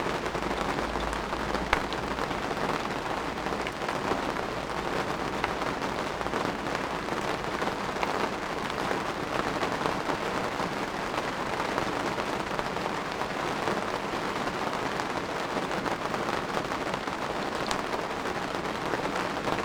Chapel Fields, Helperthorpe, Malton, UK - inside poly tunnel ... outside thunderstorm ...
inside poly tunnel ... outside thunderstorm ... mics through pre amp in SASS ... background noise ...
27 July 2018, 21:20